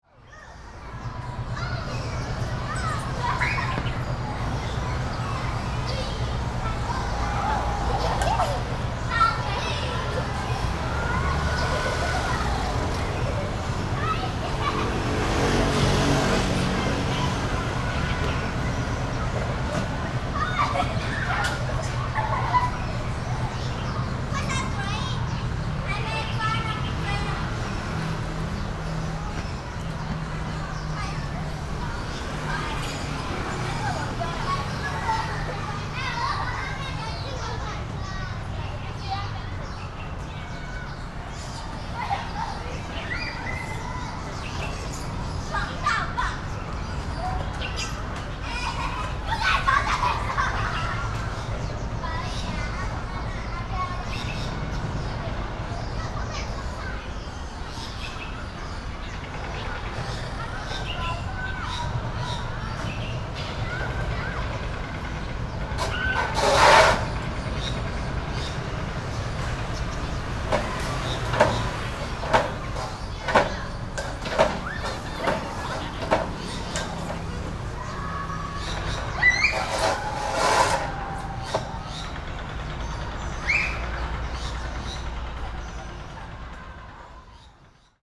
Fuh-Hsing Primary School, Kaohsiung - playing games
next to Primary school, Students are playing games, Standing under a big tree.Sony PCM D50
5 April 2012, 15:14